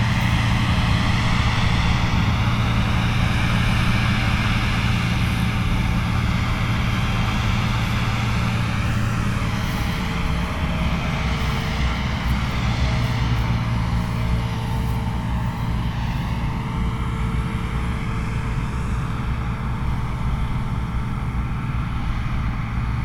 stodby, lolland, combined harvester
a combined harvester in a barley field - then stopping and run down of the machine - some wind
international landscapes - topographic field recordings and social ambiences
Dannemare, Denmark, 24 August 2010, 09:30